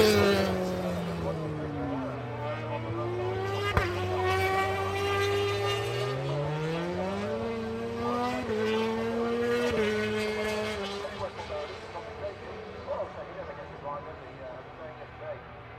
Donington Park Circuit, Derby, United Kingdom - British Motorcycle Grand Prix ... MotoGP ... FP3 ...
British Motorcycle Grand Prix ... MotoGP ... FP3 ... commentary ... Donington ... one point stereo mic to minidisk ...